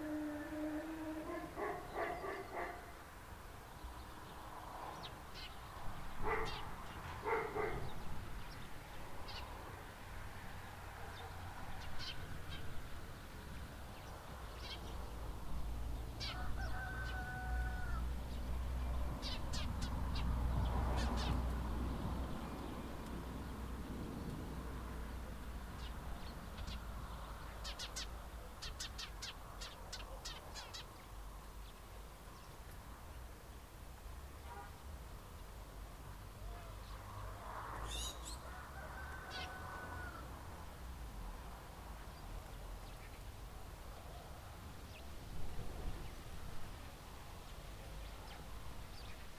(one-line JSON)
{"title": "Αντίγονος, Ελλάδα - Sonic enviroment", "date": "2021-10-15 23:45:00", "description": "Record by: Alexandros Hadjitimotheou", "latitude": "40.64", "longitude": "21.76", "altitude": "564", "timezone": "Europe/Athens"}